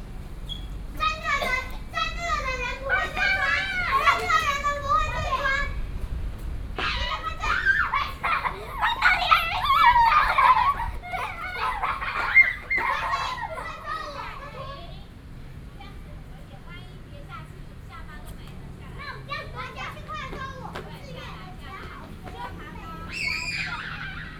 鳳雛公園, Da’an Dist. - Children in playground
Children in playground, Traffic Sound, in the Park
Sony PCM D50+ Soundman OKM II